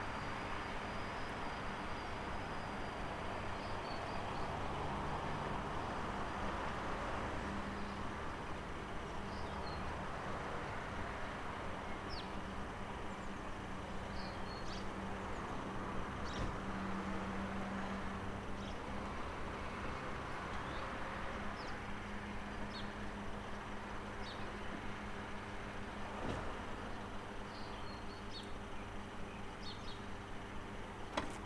M. K. Čiurlionio g., Kaunas, Lithuania - Train station - near a train getting ready to leave
Long recording of a train station atmosphere. The train is getting ready to leave, periodically producing various sounds. A few people go by, entering or exiting the train. At the end, an old man takes a phone call near the recorder. Recorded with ZOOM H5.